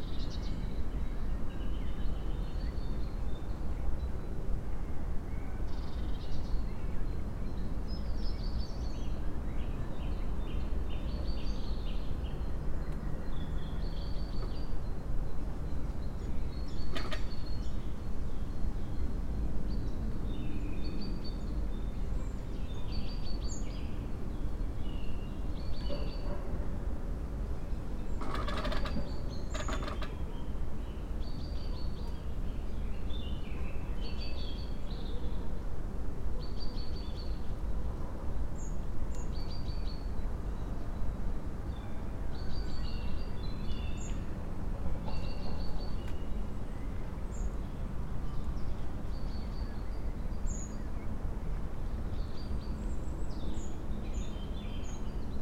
fallen tree, Piramida, Slovenia - creaking tree
spring breeze through tree crowns and light green soft, still furry leaves, fallen tree caught by another one, birds ...
24 April 2013, 17:09